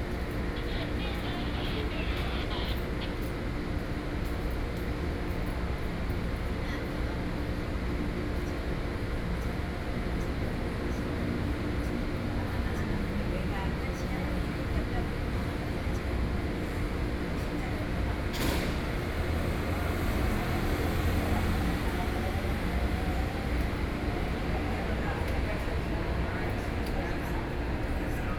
Fuxinggang Station, Beitou - Hot afternoon
In the MRT exit, A group of people living in the vicinity of the old woman sitting in the exit chat, Sony PCM D50 + Soundman OKM II
北投區, 台北市 (Taipei City), 中華民國, 11 July 2013